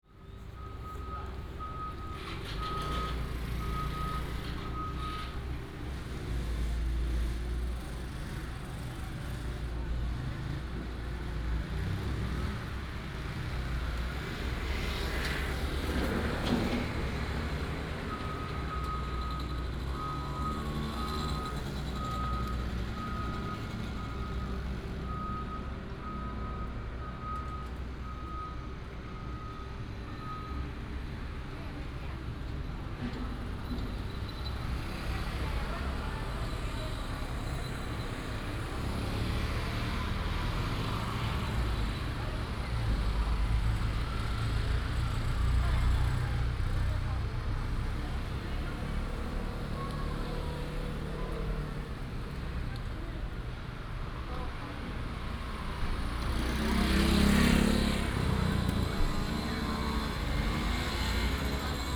in the market, motorcycle, Vendors, Construction sound